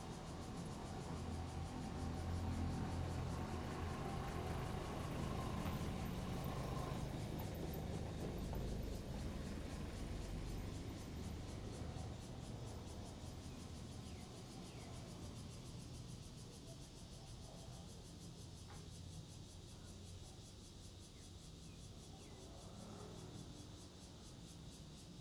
Birdsong, Traffic Sound, Next to the station, small village
Zoom H2n MS +XY
Taitung County, Taiwan, 7 September, 09:00